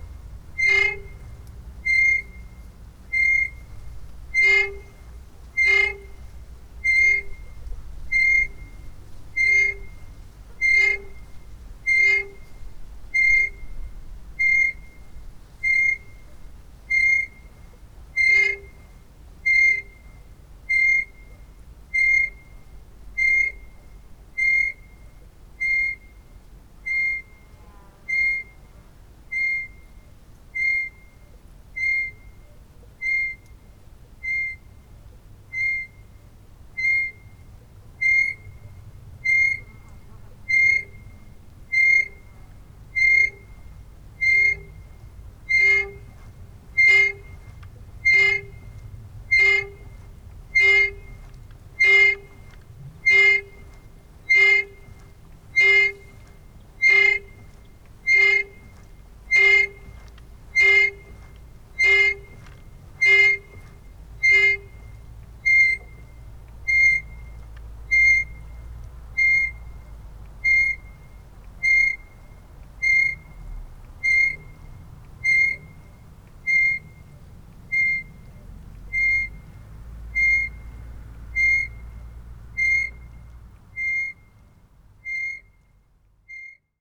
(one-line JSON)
{"title": "Lithuania, Sudeikiai, pontoon footbridge", "date": "2012-07-31 13:40:00", "description": "swinging-singing pontoon footbridge", "latitude": "55.58", "longitude": "25.71", "altitude": "141", "timezone": "Europe/Vilnius"}